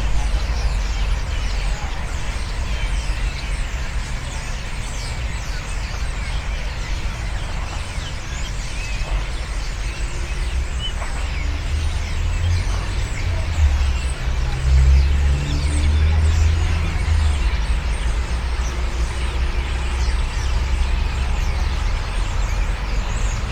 Poznan, Podolany, Wierzbak pond - countless birds
countless number of birds chirping in rushes around the pond right before sunset. (roland r-07)
30 August 2019, 19:51